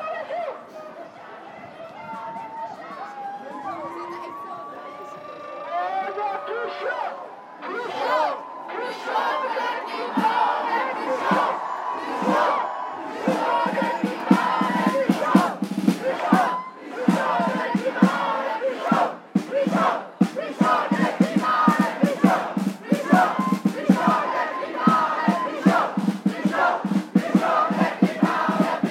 Ottignies-Louvain-la-Neuve, Belgique - Youth For Climate
Non-violent demonstration in Louvain-La-Neuve : Youth For Climate. The students boycott school and demonstrate in the street. They want actions from the politicians. Very much wind, a little rain and 3000 young people shouting.